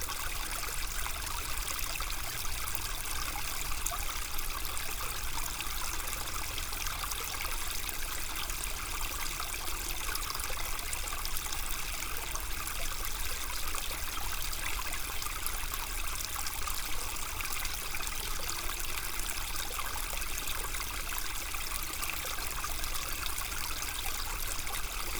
Court-St.-Étienne, Belgique - Ry Pirot stream
The very quiet Ry Pirot stream, flowing in a beautiful forest.
Court-St.-Étienne, Belgium, 12 January 2017